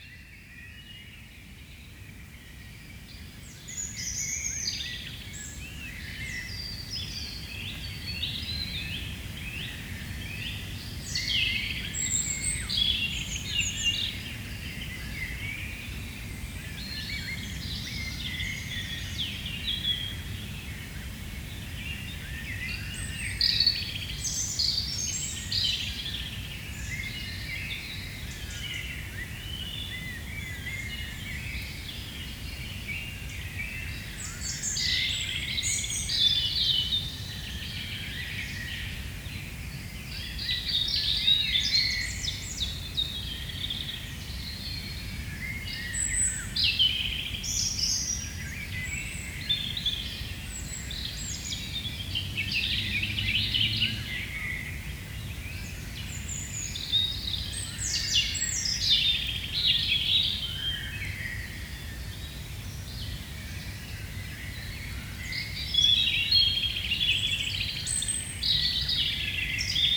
Forest quietly waking up, very early on the morning. Ambiance is not noisy, it's appeased. Robin singing on a nearby tree, and distant blackbirds.